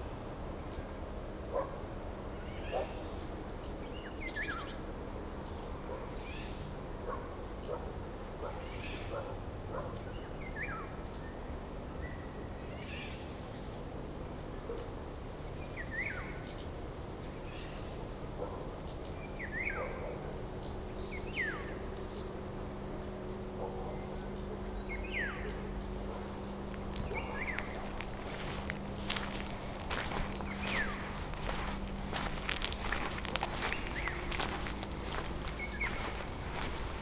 вулиця Лівобережна, Костянтинівка, Донецька область, Украина - Промзона Автостекло
Заброшенная территория бывшего завода Автостекло: зеленая зона с руинами зданий и мусором, поросшая кустарниками и деревьями. Есть возможность переправится на другой берег реки Кривой Торец
Запись: Zoom H2n
Donetsk Oblast, Ukraine